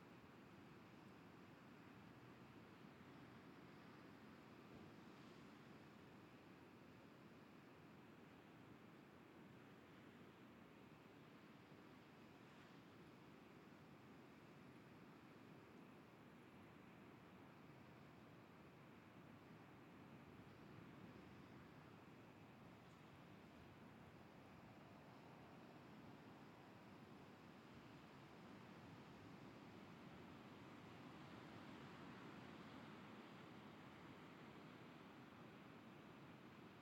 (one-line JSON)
{"title": "Saint-Vincent-sur-Jard, France - Ocean in the distance", "date": "2013-08-10 22:00:00", "description": "Ocean, Vendée, 20 metres, low tide, continuous waves, evening\nby F Fayard - PostProdChahut\nSound Device MixPre - Fostex FR2, MS Neuman KM 140-KM120", "latitude": "46.41", "longitude": "-1.55", "altitude": "4", "timezone": "Europe/Paris"}